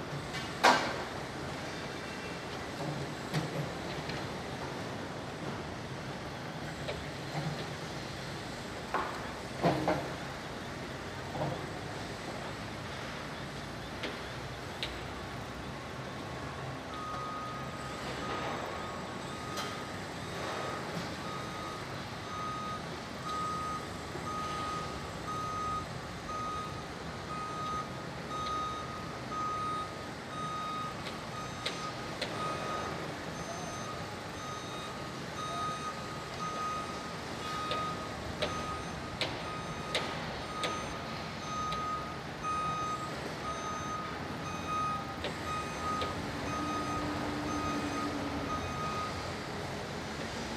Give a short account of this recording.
hammering, drilling, beeps. Sony MS mic